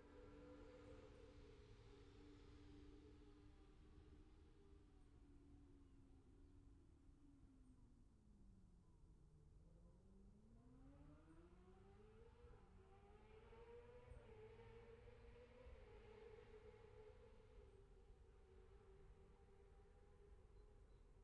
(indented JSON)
{
  "title": "Scarborough, UK - motorcycle road racing 2017 ... sidecars ...",
  "date": "2017-04-22 09:59:00",
  "description": "Sidecar practice ... Bob Smith Spring Cup ... Olivers Mount ... Scarborough ... open lavalier mics clipped to sandwich box ...",
  "latitude": "54.27",
  "longitude": "-0.41",
  "altitude": "147",
  "timezone": "Europe/London"
}